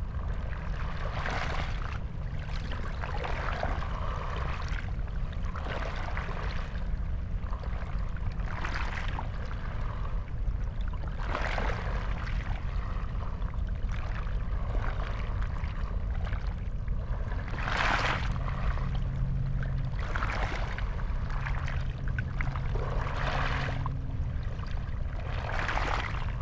Molo, Punto Franco Nord, Trieste, Italy - black mussels garden
sea weaves through black mussels